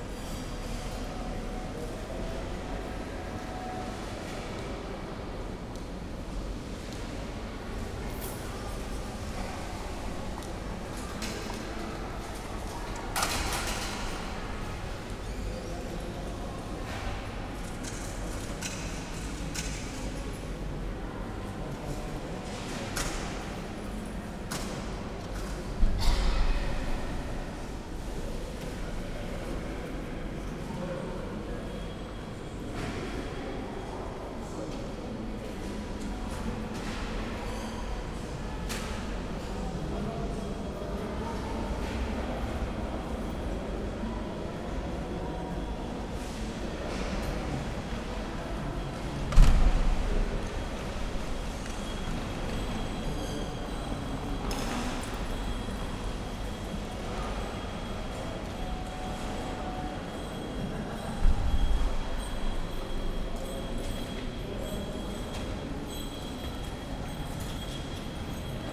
Oldenburg Hbf - main station, hall ambience
Oldenburg Hbf, main station, hall ambience
(Sony PCM D50, DPA4060)
Oldenburg, Germany, 14 September